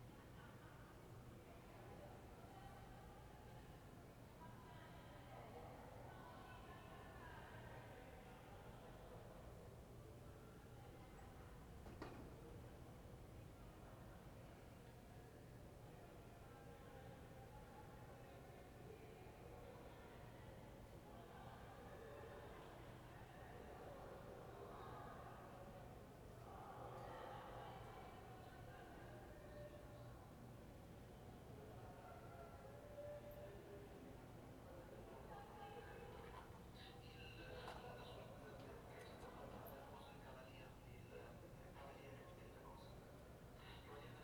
{"title": "Ascolto il tuo cuore, città. I listen to your heart, city. Several chapters **SCROLL DOWN FOR ALL RECORDINGS** - Evening with voices and radio in background in the time of COVID19 Soundscape", "date": "2020-05-14 22:50:00", "description": "\"Evening with voices and radio in background in the time of COVID19\" Soundscape\nChapter LXXVI of Ascolto il tuo cuore, città. I listen to your heart, city\nThursday May 14th 2020. Fixed position on an internal terrace at San Salvario district Turin, sixty five days after (but day eleven of Phase II) emergency disposition due to the epidemic of COVID19.\nStart at 10:50 p.m. end at 11:40 p.m. duration of recording 50’00”", "latitude": "45.06", "longitude": "7.69", "altitude": "245", "timezone": "Europe/Rome"}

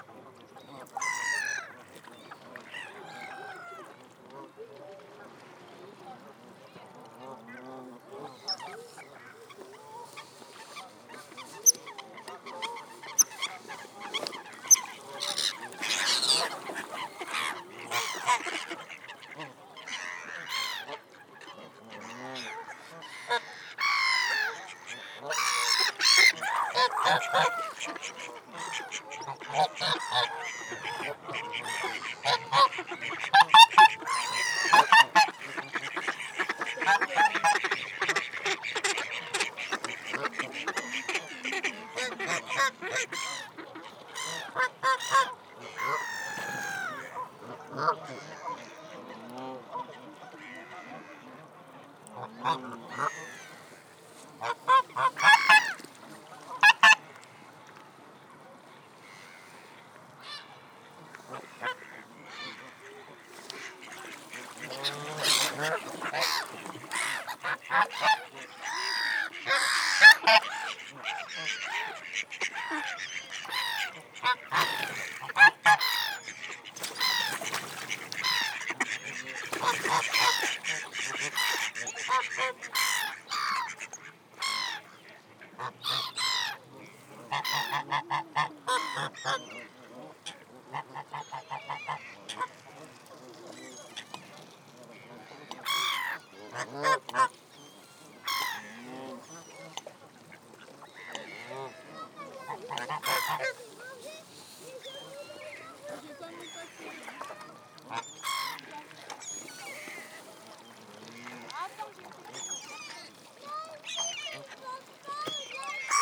{"title": "Rambouillet, France - An hour close to the birds on the Rondeau lake", "date": "2019-01-01 15:15:00", "description": "During the winter period, waterfowl were hungry. Intrigued by their presence in large numbers on the waters of the Lake Le Rondeau, near the Rambouillet castle, I recorded their songs for an uninterrupted hour. Since they were hungry, they solicited all the walkers. We hear them a lot. The recording is quiet on this new year day and really provokes the sound of a lullaby.\nWe can hear : Mallard duck, Canada goose, Eurasian Coot, Domestic goose, Blackhead gull, Homo sapiens.", "latitude": "48.64", "longitude": "1.82", "altitude": "142", "timezone": "Europe/Paris"}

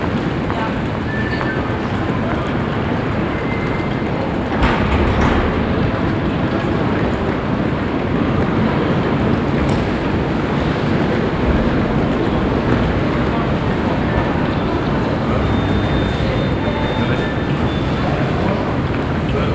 {"title": "cologne, deutz, station, passenger tunnel", "date": "2011-01-16 17:20:00", "description": "passengers with rolling suitcases in the passenger tunnel that leads to the rail tracks.\nsoundmap d - social ambiences and topographic field recordings", "latitude": "50.94", "longitude": "6.97", "altitude": "49", "timezone": "Europe/Berlin"}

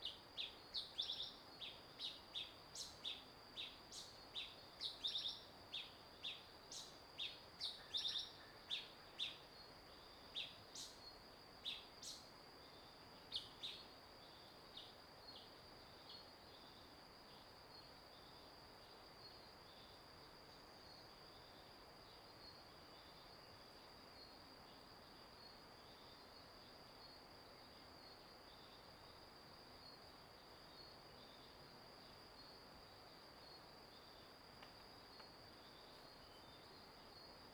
{"title": "達保農場, 達仁鄉, Taitung County - Entrance in mountain farm", "date": "2018-04-06 05:41:00", "description": "early morning, Bird cry, Stream sound, Entrance in mountain farm", "latitude": "22.45", "longitude": "120.85", "altitude": "241", "timezone": "Asia/Taipei"}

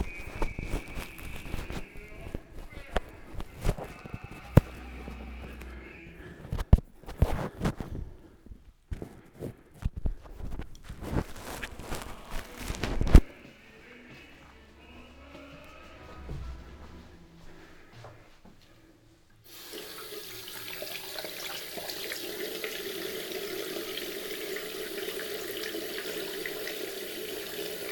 {"title": "Ascolto il tuo cuore, città. I listen to your heart, city. Chapter CLIX - No fever Saturday night in the time of COVID19: Soundwalk.", "date": "2021-02-27 21:54:00", "description": "\"No fever Saturday night in the time of COVID19\": Soundwalk.\nChapter CLIX of Ascolto il tuo cuore, città. I listen to your heart, city\nSaturday, February 27th, 2021. San Salvario district Turin, walking round San Salvario district, just after my first COVID-19 vaccine.\nThree months and twenty days of new restrictive disposition due to the epidemic of COVID19.\nStart at 9:54 p.m. end at 10:19 p.m. duration of recording 24’48”\nThe entire path is associated with a synchronized GPS track recorded in the (kmz, kml, gpx) files downloadable here:", "latitude": "45.06", "longitude": "7.68", "altitude": "245", "timezone": "Europe/Rome"}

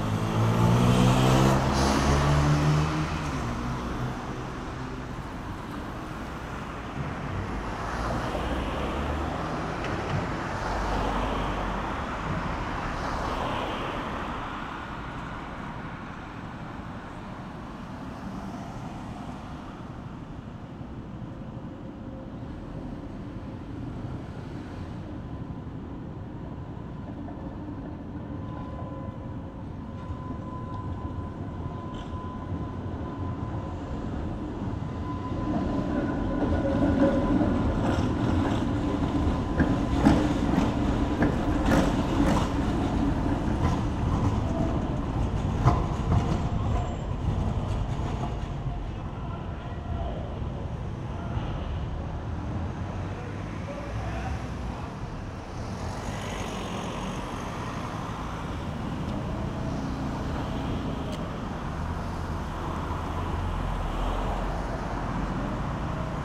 {"title": "leipzig lindenau, demmeringstraße, genau vor dem d21", "date": "2011-09-01 11:20:00", "description": "die kreuzung vor dem d21 in der demmeringstraße um die mittagszeit. autos, straßenbahnen, radfahrer als urbane tongeber.", "latitude": "51.34", "longitude": "12.33", "timezone": "Europe/Berlin"}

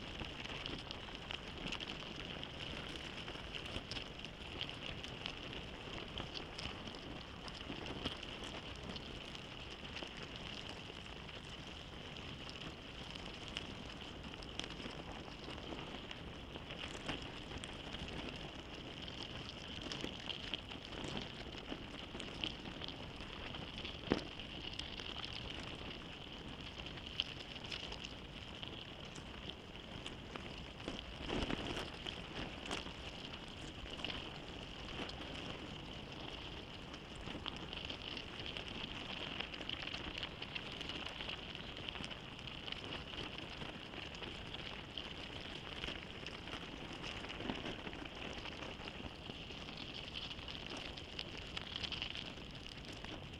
{"title": "Nida, Lithuania, ants nest", "date": "2018-05-29 11:15:00", "description": "hydrophones in ants nest", "latitude": "55.30", "longitude": "20.98", "altitude": "27", "timezone": "Europe/Vilnius"}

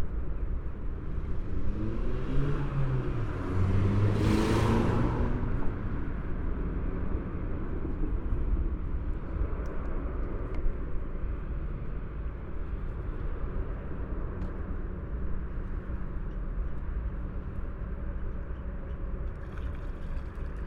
{"title": "Binckhorst Uranusstraat", "date": "2011-12-09 14:38:00", "description": "parabolic mic cars versus seagulls", "latitude": "52.07", "longitude": "4.33", "altitude": "1", "timezone": "Europe/Amsterdam"}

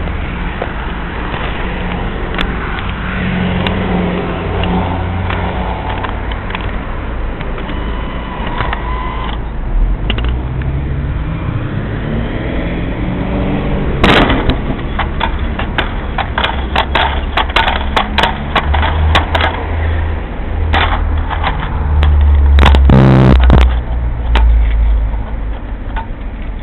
{"title": "vending machine for hot and cold drinks", "latitude": "35.65", "longitude": "139.72", "altitude": "17", "timezone": "GMT+1"}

